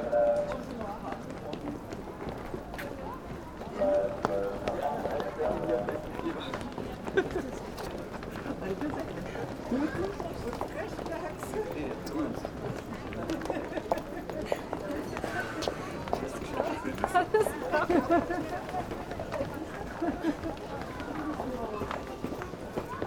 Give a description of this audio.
Berlin Ostkreuz, traffic cross, steps on stairs, station ambience